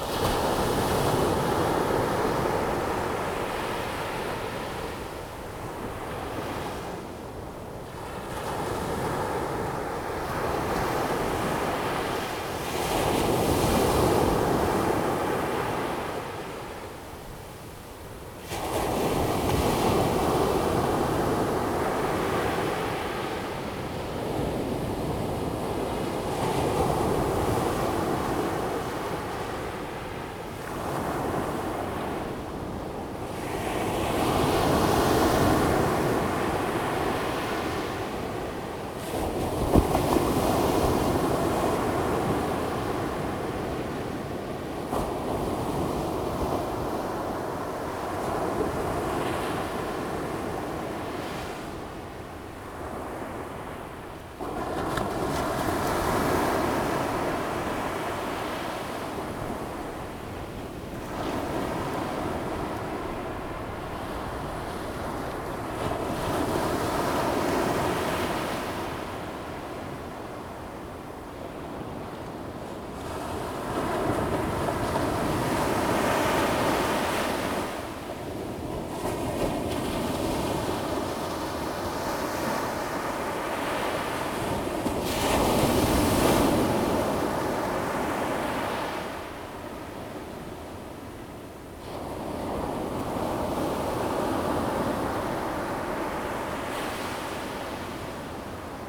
前洲子, 淡水區, New Taipei City - the waves
On the beach, Sound of the waves
Zoom H2n MS+XY
4 January 2017, 15:24